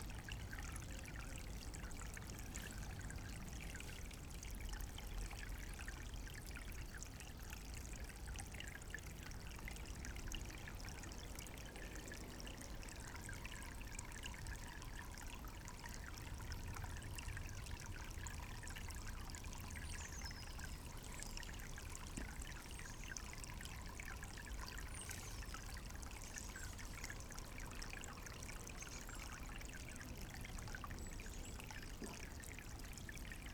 berlin wall of sound - panke river next to s-bahn, pankow. submitted by j.dickens & f.bogdanowitz.
Berlin, Germany